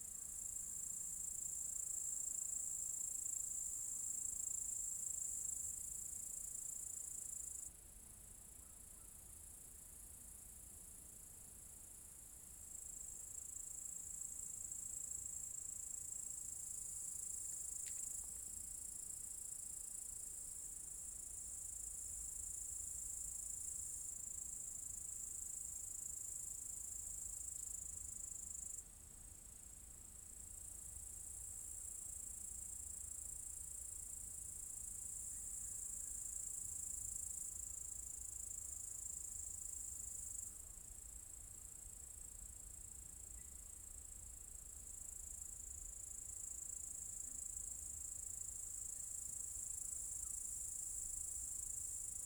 Vilkijos apylinkių seniūnija, Litouwen - Cicadas
Cicadas in a apple tree orchard.
Lithuania, 23 August, ~20:00